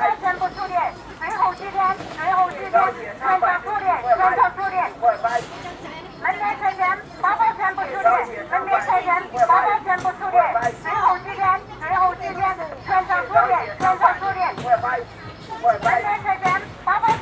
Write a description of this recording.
Sound commercials on stalls neighbouring oneself, Binaural - Olympus LS-100